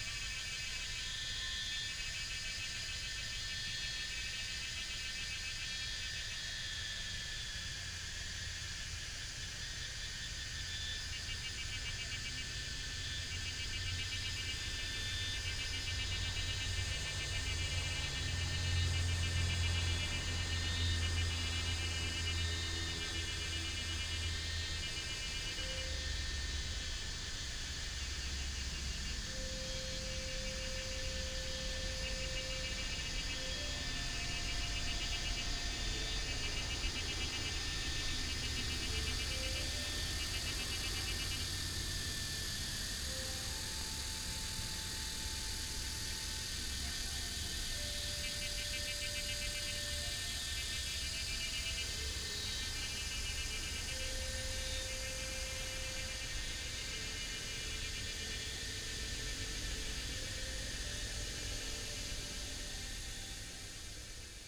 Cicadas cry, Traffic Sound, Very hot weather, A small village in the evening